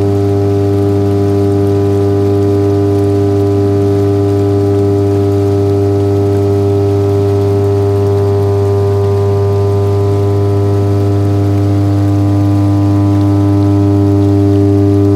stolzembourg, SEO, hydroelectric powerplant, transformator
Inside an upper tunnel of the SEO hydroelectric powerplant. The sound of a power transformator.
Stolzemburg, SEO, Wasserkraftwerk, Transformator
In einem höher gelegenen Tunnel des SEO-Wasserkraftwerks.
Stolzembourg, SEO, usine hydroélectrique, transformateur
À l’intérieur du tunnel supérieur de l’usine hydroélectrique SEO. Le bruit d’un transformateur électrique.
Luxembourg, 18 September 2011